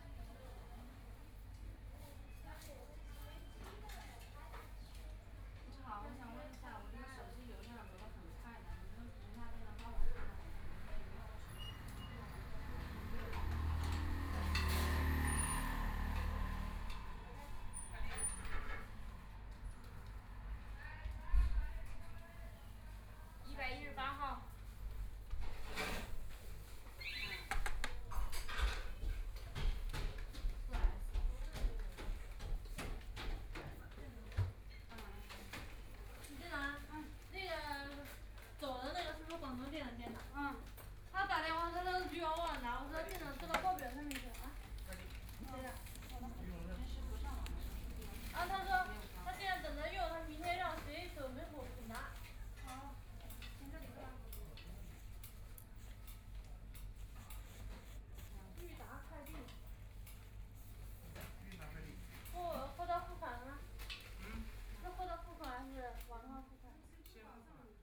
In the restaurant, Binaural recording, Zoom H6+ Soundman OKM II